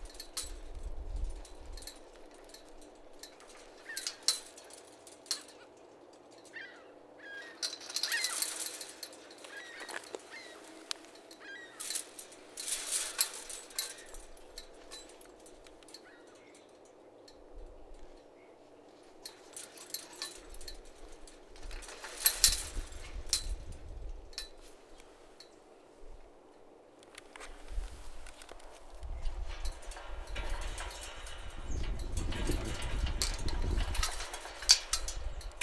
Montreal: Point St-Charles - Point St-Charles
equipment used: Zoom H4
QC, Canada, 21 March, ~09:00